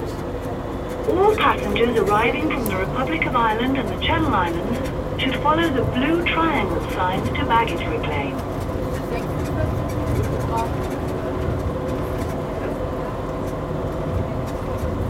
airport transit, london stansted
recorded july 18, 2008.
Essex, UK